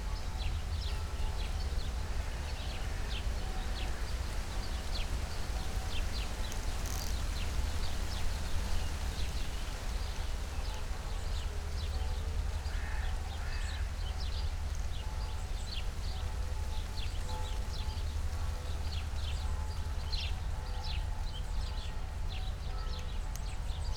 {
  "title": "Tempelhofer Feld, Berlin, Deutschland - early summer ambience",
  "date": "2020-06-26 12:00:00",
  "description": "light breeze, noon churchbells in the distance, hum of some machines\n(Sony PCM D50, Primo EM172)",
  "latitude": "52.48",
  "longitude": "13.40",
  "altitude": "42",
  "timezone": "Europe/Berlin"
}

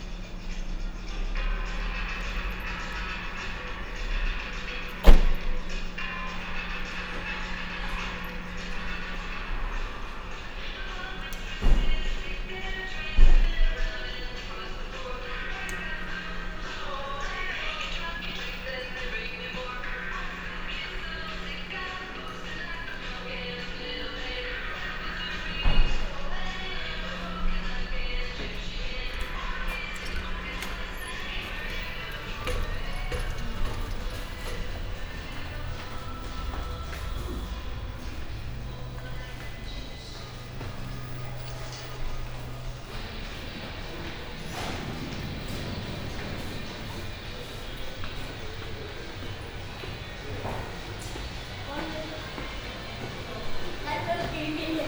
{
  "title": "Kassel Citypoint Walk - Kassel Citypoint",
  "date": "2010-08-02 15:00:00",
  "description": "I walked from the underground carpark up to the 2nd level of the shopping mall, accompanied by a song from the sound system of the mall. Got the end of the song in front of the cash machine. ZoomH4 + OKM binaural mic",
  "latitude": "51.32",
  "longitude": "9.50",
  "altitude": "165",
  "timezone": "Europe/Berlin"
}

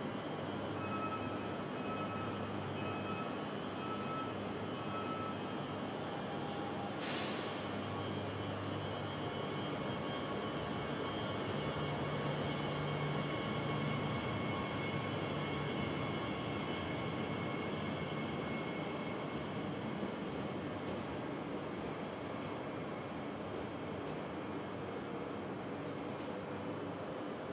{
  "title": "Gare du Nord, Paris, France - Gare du Nord - ambiance - départ d'un TER",
  "date": "2022-10-03 20:00:00",
  "description": "Gare du Nord\ndépart d'un train TER en direction de Beauvais\nZOOM F3 + AudioTechnica BP 4025",
  "latitude": "48.88",
  "longitude": "2.36",
  "altitude": "53",
  "timezone": "Europe/Paris"
}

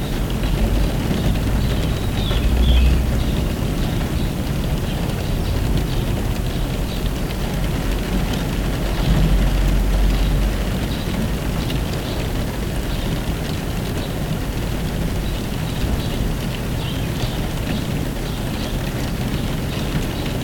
{
  "title": "Heinrichstraße, Hameln, Germany - WET SNOWNING & BIRD SINGING (Recorded from inside the car)",
  "date": "2021-03-09 16:23:00",
  "description": "It's a kind of wet snow recording from inside a car. A mix of rain and snow, what is also well heard in the recording. While I was recording the snow also bird were pretty loud, which are well heard in the mix.\nTASCAM DR100-MKIII\nMikroUSI Omni Directional Microphones",
  "latitude": "52.10",
  "longitude": "9.37",
  "altitude": "70",
  "timezone": "Europe/Berlin"
}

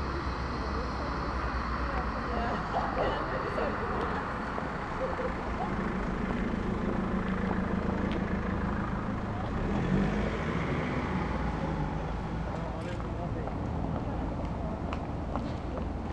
{
  "title": "Berlin: Vermessungspunkt Maybachufer / Bürknerstraße - Klangvermessung Kreuzkölln ::: 04.06.2010 ::: 00:09",
  "date": "2010-06-04 00:09:00",
  "latitude": "52.49",
  "longitude": "13.43",
  "altitude": "39",
  "timezone": "Europe/Berlin"
}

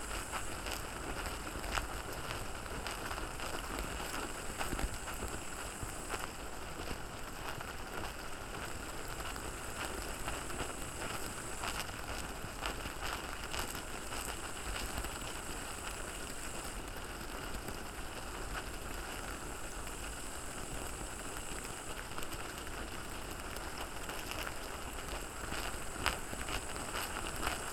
{
  "title": "Inkūnai, Lithuania, ant nest",
  "date": "2022-08-11 17:55:00",
  "description": "Ant nest recorded with a pair of omni mics and diy \"stick\" contact microphone",
  "latitude": "55.65",
  "longitude": "25.18",
  "altitude": "96",
  "timezone": "Europe/Vilnius"
}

